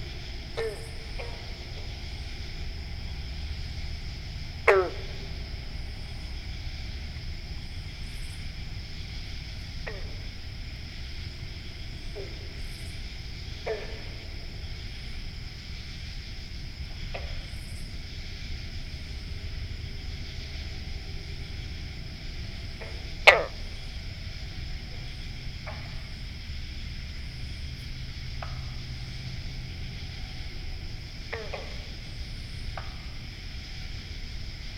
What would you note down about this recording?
A friend and I fought through an hour's worth of briars and brush at night to access this isolated swamp situated in the center of a swamp. This late evening recording was surreal. Here are a few minutes of the 20 minute take. Our beautiful frogs make it work.